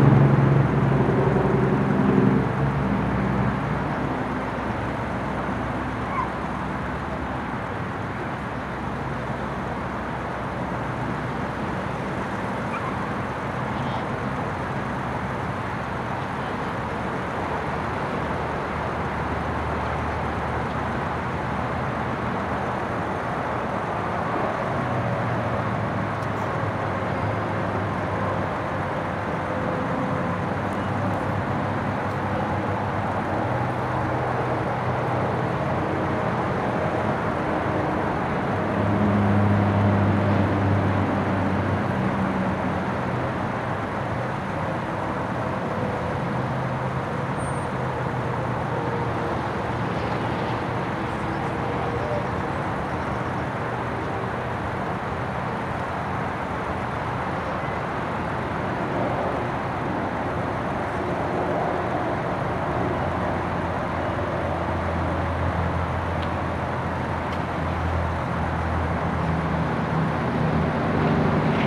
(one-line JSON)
{"title": "Rest Area No. 22 I-75 Southbound, Monroe County, GA, USA - Rest Stop Ambiance", "date": "2021-12-23 12:41:00", "description": "A recording of a rest stop in which cars and trucks can be heard pulling in and out. Given the close proximity to the highway, the roar of traffic is constant. Some minor processing was done in post.\n[Tascam Dr-100mkiii, on-board uni mics]", "latitude": "32.97", "longitude": "-83.83", "altitude": "148", "timezone": "America/New_York"}